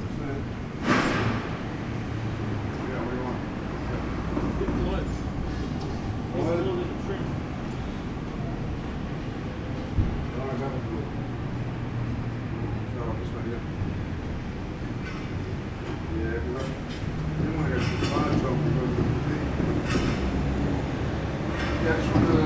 neoscenes: workers at the Argyll Cut
18 November 2009, 09:10